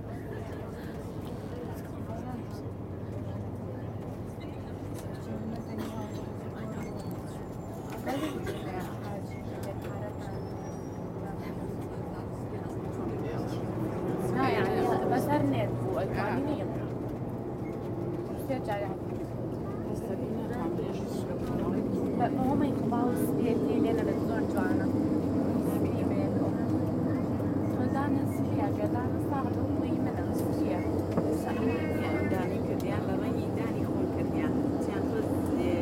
koeln-bonn airport, shuttle
recorded july 18, 2008.
Cologne Bonn Airport, Cologne, Germany